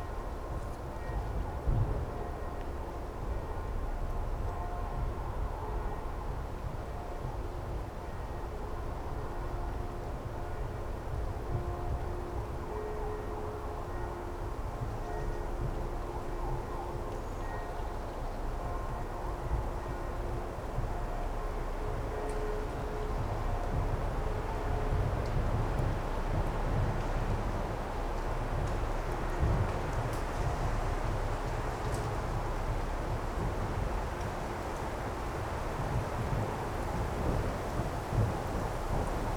Morasko nature reserve - autumn in the forest
recorded on one of the paths in Morasko forest. Church bells coming form Suchy Las town, a truck climbing forest road made of concrete slabs, rumbling with its iron container, gusts of wind bring down a shower of branches and acorns. (roland r-07 internal mics)
Suchy Las, Poland, 12 September 2018